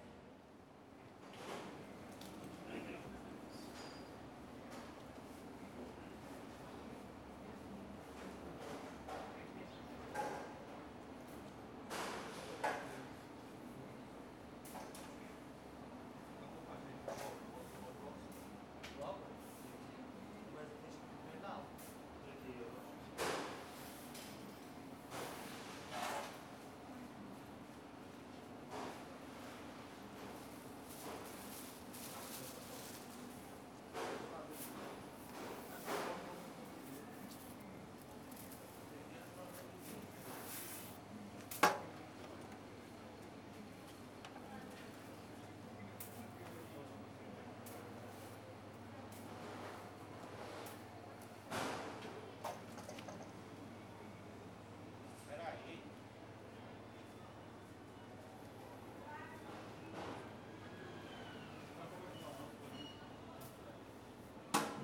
Porto, Mercado do Bolhão - short soundwalk around the stalls
a couple of man talking over coffee and sounds of crates being thrown n a near by corridor, old refrigerating unit churning out on the wall, vendors talking to each other and to customers, at the end walking by a small bistro/cafe, filled with locals, talking about something feverishly.